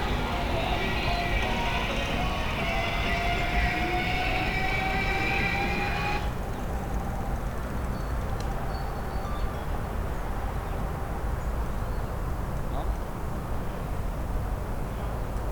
Zeitiger Nachmittag nahe dem Stadtzentrum Schkeuditz. Vögel, Mülltonnen, Flugzeug, Autos, Straßenbahn, Menschen, Blutooth-Beschallung etc.
Aufgenommen während eines Soundwalks im Rahmen eines Workshops zur Einführung in die Klangökologie am 12.Februar 2018 mit Lea Skubella und Maxi Scheibner.
Zoom H4n + Røde NT5.